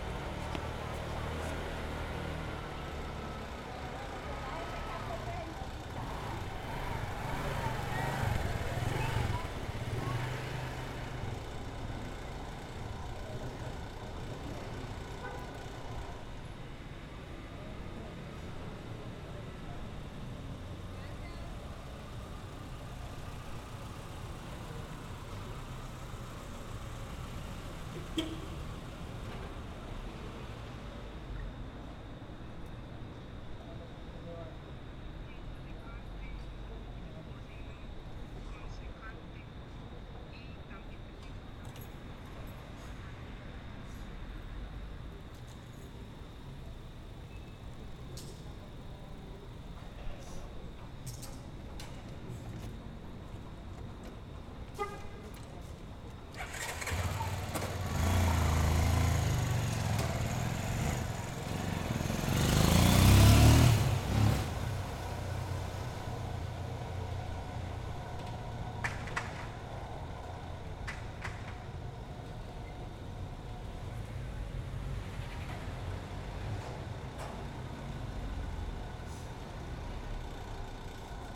Cl., Medellín, El Poblado, Medellín, Antioquia, Colombia - Apartamentos Acquavella
Se aprecia el flujo de vehículos y personas al frente del Edificio Acquavella